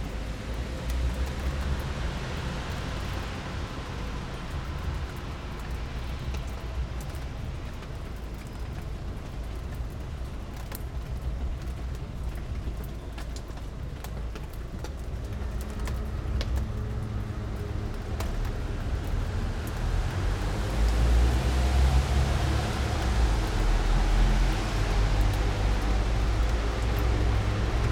Avenue Jean Jaurès, Paris, France - Confinement après la pluie, sur le balcon
Line Audio CM4 ORTF recording
On balcony 5th floor after rain